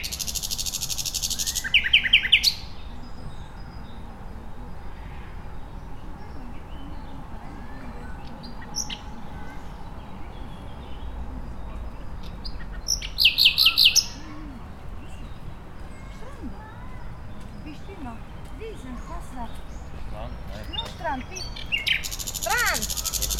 Gustav-Meyer-Allee, Berlin, Deutschland - Nightingale in the park
Nightingale in the park, a couple passing by, children on a playground, distant traffic noise